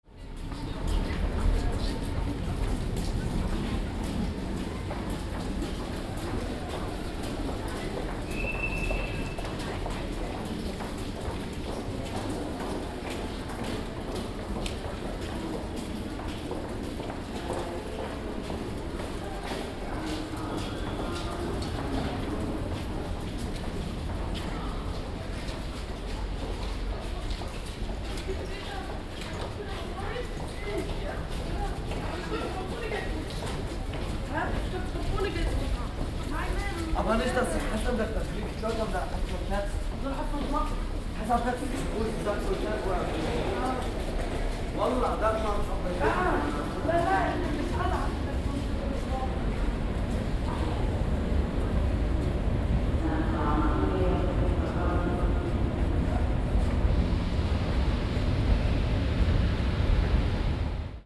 Ostbahnhof - Underground
Underground system at Berlin Ostbahnhof. Aporee Workshop CTM.
Berlin, Germany, 2 February 2010, ~16:00